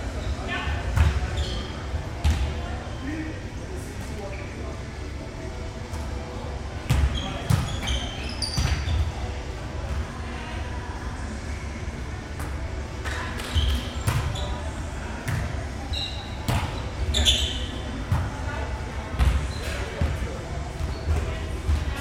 volleyball players in the CAU sporthall
Kiel, Germany, October 2009